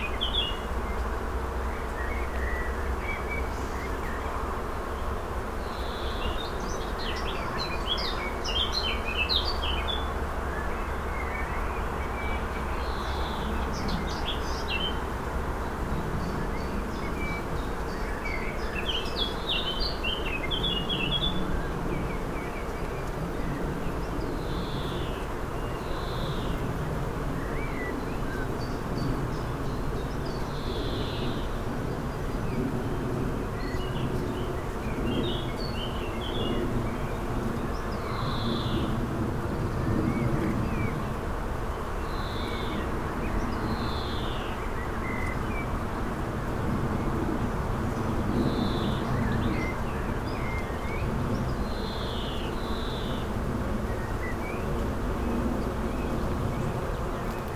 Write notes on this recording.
at the edge of opencast Tagebau Hambach, near village Niederzier, bees in a hazelnut tree, distant traffic, (Sony PCM D50)